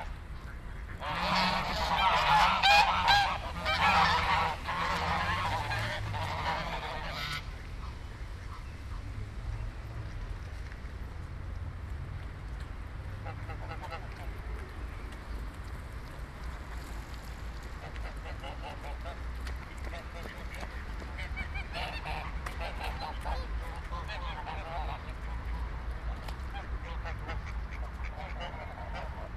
frankfurt, untermainkai, schwäne an der promenade
schwäne am nachmittag an fussgängerpromenande am main
soundmap nrw: social ambiences/ listen to the people - in & outdoor nearfield recordings